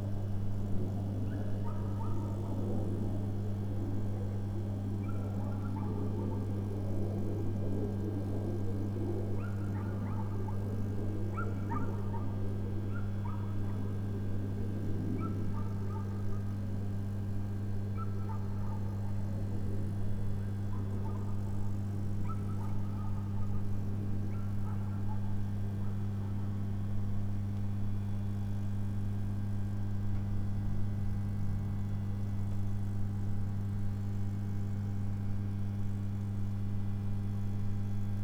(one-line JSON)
{"title": "Srem, outskirts, near premises of closed foundry - power distribution", "date": "2013-04-07 15:28:00", "description": "sounds of power distribution station, dog barks echoing among walls of big, concrete foundry buildings", "latitude": "52.07", "longitude": "17.03", "altitude": "80", "timezone": "Europe/Warsaw"}